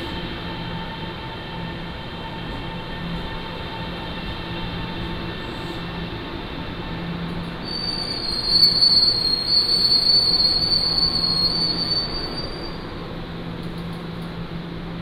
三義火車站, Sanyi Township - At the station platform
At the station platform, Train arrives and leaves